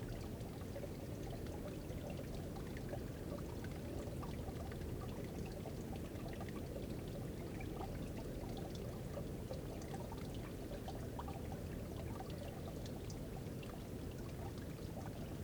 Forêt d'Éperlecques, France - Corbeaux D'Eperlecques
Corbeaux dans clairière forêt d'Eperlecques, en hiver.
Hauts-de-France, France métropolitaine, France, 11 February 2022